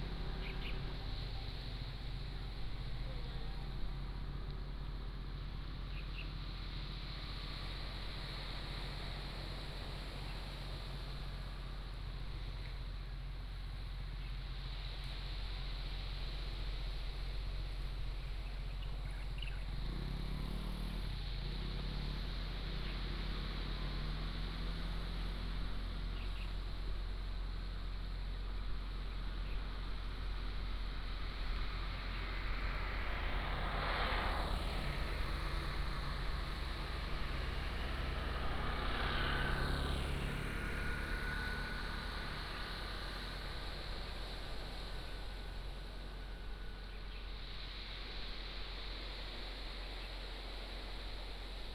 厚石群礁, Liuqiu Township - On the coast
Traffic Sound, On the coast, Sound of the waves, Birds singing
Pingtung County, Taiwan, 2 November 2014